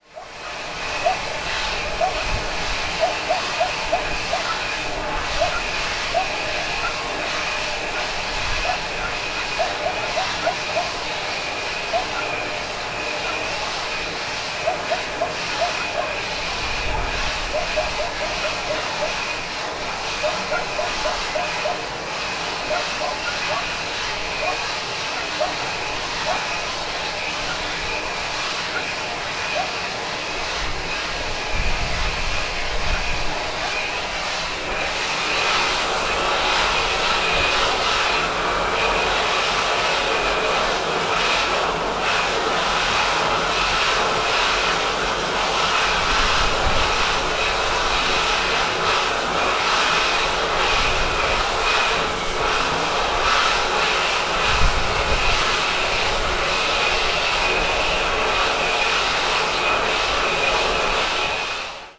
came across this small concrete building with a intense sound of flowing water. recorded at the door of the building.